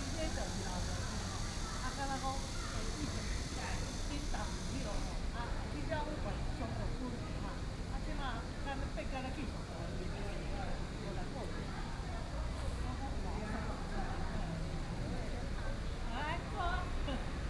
Sitting in the Square, Very hot weather, Many tourists
Sony PCM D50+ Soundman OKM II